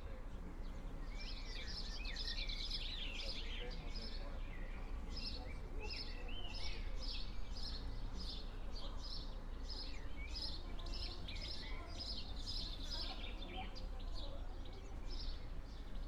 Maribor, Medvedova, Babica - sunday afternoon street ambience
sunday afternoon street ambience, recorded from the balcony of Babica house
27 May 2012, 16:05